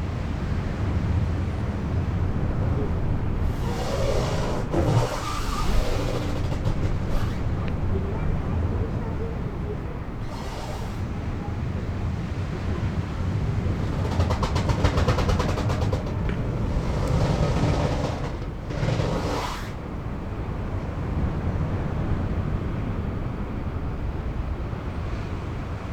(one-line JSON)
{"title": "sassnitz: fischereihafen - the city, the country & me: fishing harbour", "date": "2010-10-05 18:11:00", "description": "ship rubbing against a fender (in this case a truck tyre)\nthe city, the country & me: october 5, 2010", "latitude": "54.51", "longitude": "13.65", "altitude": "4", "timezone": "Europe/Berlin"}